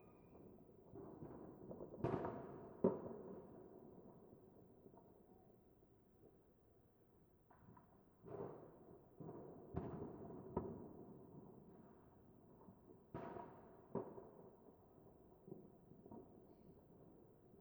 Rijeka, Croatia, Happy New Recordings - Happy New Recordings 2017
Happy new year, and have everything you wish !!!